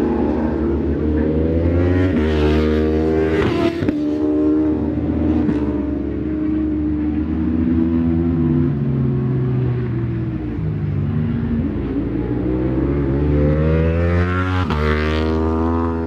British Superbikes 2004 ... Qualifying ... part one ... Edwina's ... one point stereo mic to minidisk ...